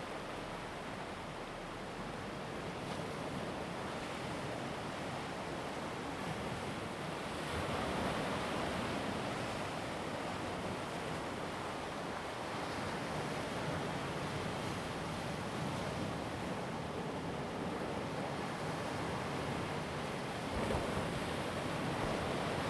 Sound of the waves
Zoom H2n MS +XY
Lyudao Township, Taitung County, Taiwan